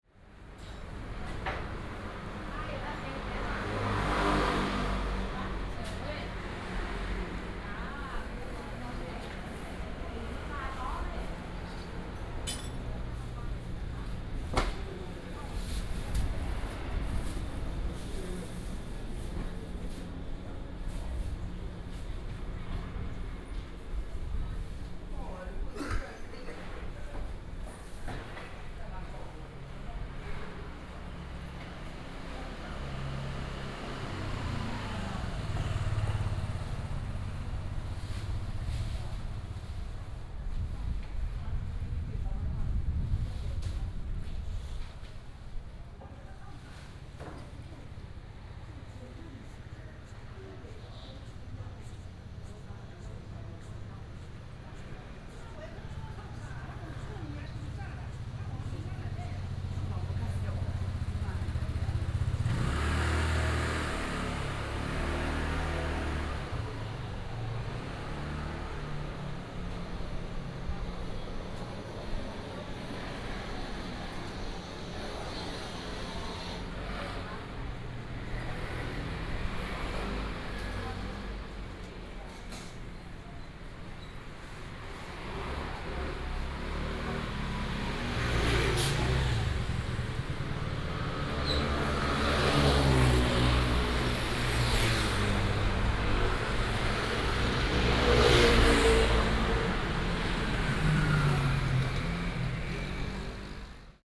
Ln., Sec., Mingzhi Rd., Taishan Dist. - Walking in the alley
alley, Traditional market, Traffic sound
Taishan District, 明志路三段182巷34號